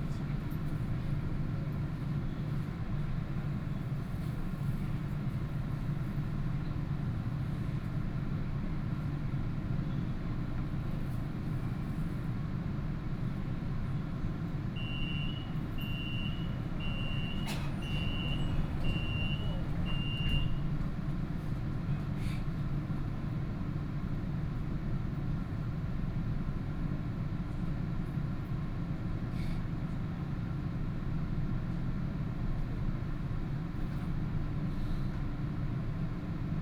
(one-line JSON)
{"title": "Kongjiang Road, Yangpu District - Line 8 (Shanghai Metro)", "date": "2013-11-26 14:58:00", "description": "from Middle Yanji Road Station to Anshan Xincun Station, Binaural recording, Zoom H6+ Soundman OKM II", "latitude": "31.28", "longitude": "121.52", "altitude": "17", "timezone": "Asia/Shanghai"}